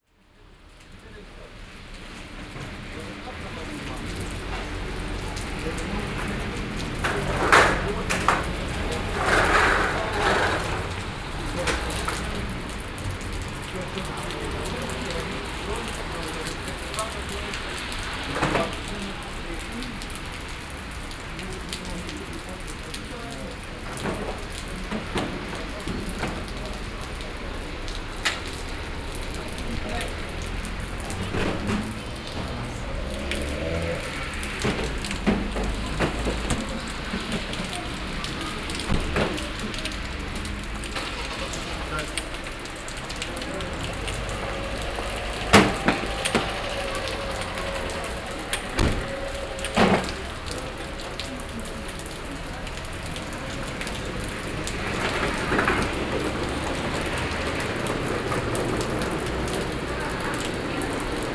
Schwäbisch Gmünd, Deutschland - Street in front of a shopping center
The street in front of the shopping center "City Center" an a rainy afternoon.